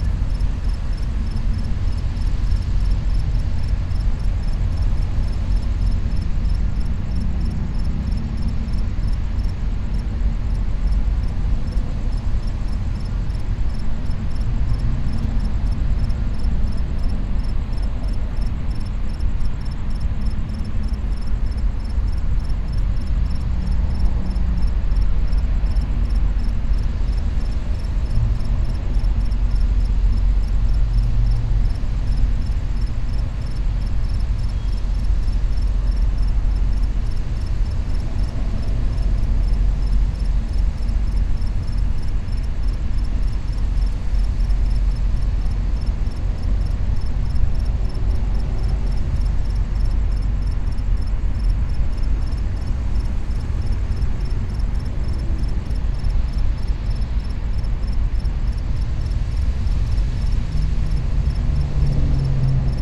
N Mission Rd, Los Angeles, CA, USA - Piggyback Yard
A current railroad yard, this large parcel is favored for a future ecological restoration.